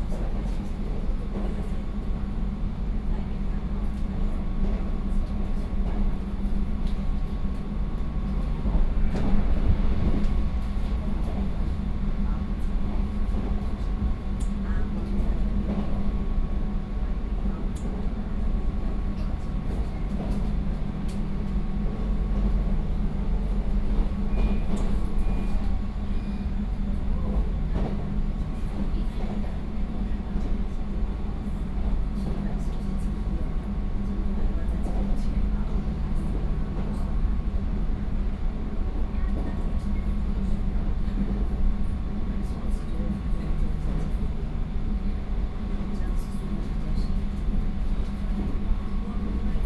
inside the Trains, Sony PCM D50 + Soundman OKM II